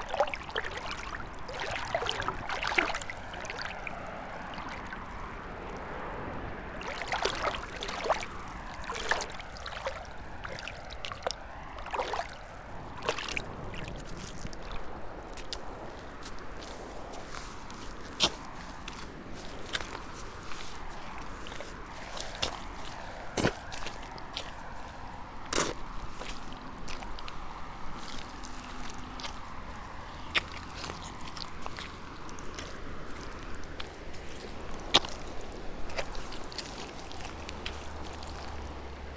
river Drava, Dvorjane - feet, gravel-stones, mud, microphones